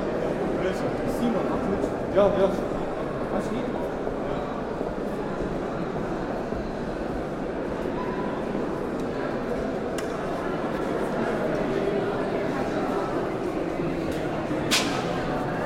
opening of an art exhibition, walk through huge open empty space with few wall barriers